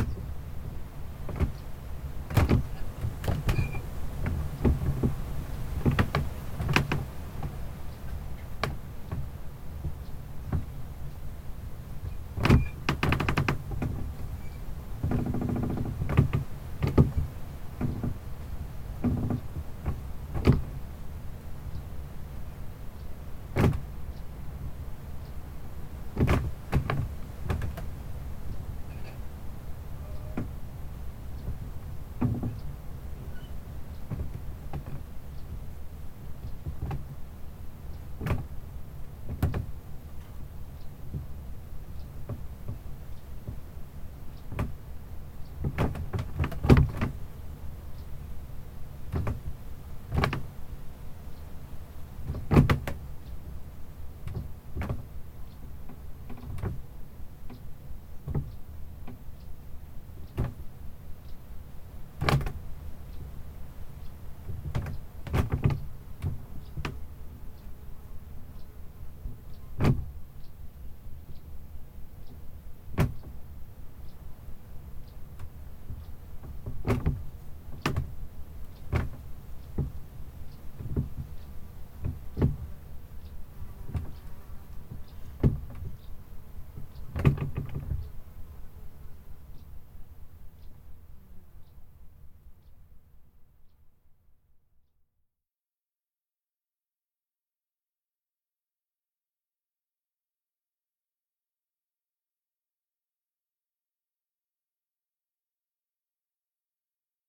Šlavantai, Lithuania - Outdoor toilet cabin creaking in the wind
A combined stereo field and dual contact microphone recording of a wooden outdoor toilet interior, creaking against the pressure of wind. Contact microphone input is boosted, accentuating the character of wooden constructions brushing against each other.
Alytaus apskritis, Lietuva, 5 June 2020